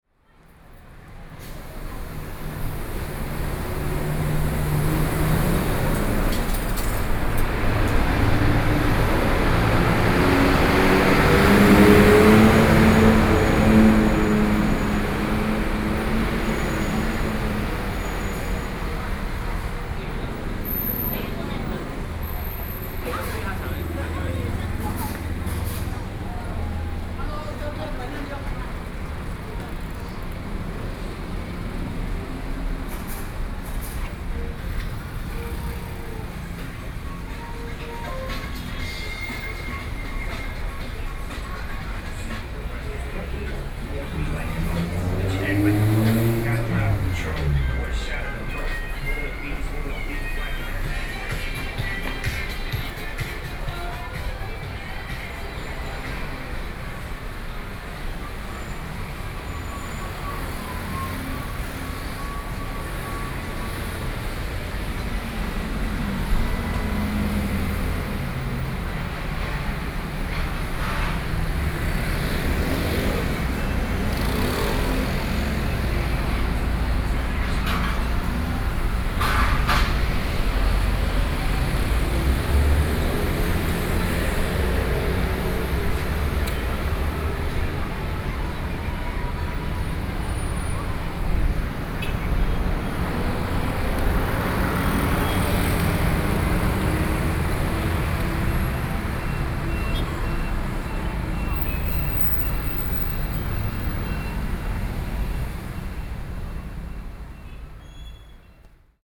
Zhongzheng Road - in the street
Traffic Noise, Sony PCM D50 + Soundman OKM II
Zhongli City, Taoyuan County, Taiwan, August 2013